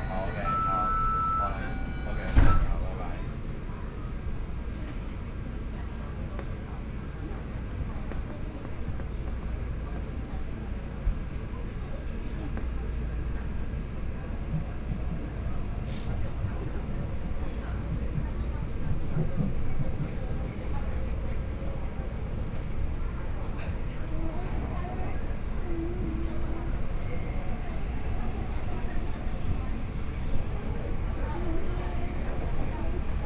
Tamsui District, New Taipei City - Tamsui Line (Taipei Metro)
from Zhuwei Station to Tamsui Station, Binaural recordings, Sony PCM D50 + Soundman OKM II
Danshui District, New Taipei City, Taiwan, 2 November, 20:45